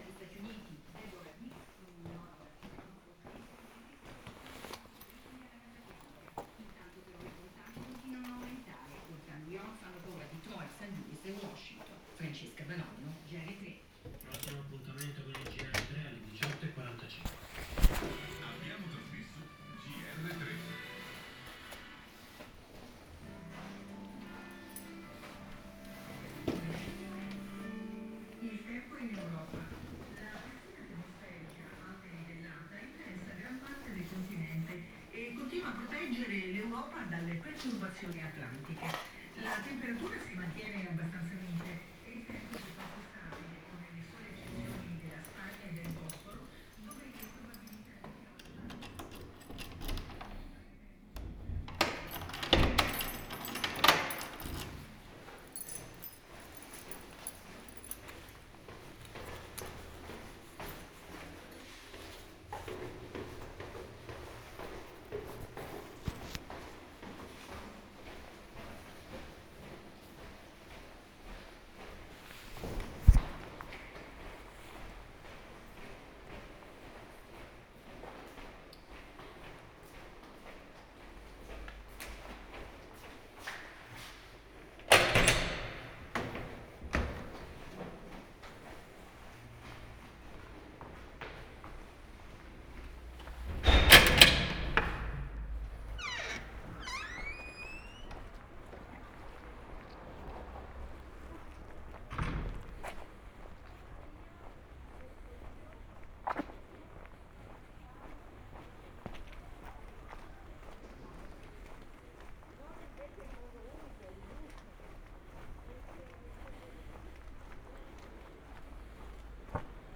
Ascolto il tuo cuore, città. I listen to your heart, city. Several chapters **SCROLL DOWN FOR ALL RECORDINGS** - It’s five o’clock with bells on Monday in the time of COVID19
"It’s five o’clock with bells on Monday in the time of COVID19" Soundwalk
Chapter XXXV of Ascolto il tuo cuore, città. I listen to your heart, city
Monday April 6th 2020. San Salvario district Turin, walking to Corso Vittorio Emanuele II and back, twentyseven days after emergency disposition due to the epidemic of COVID19.
Start at 4:50 p.m. end at 5:10p.m. duration of recording 19'10''
The entire path is associated with a synchronized GPS track recorded in the (kmz, kml, gpx) files downloadable here:
Piemonte, Italia, April 2020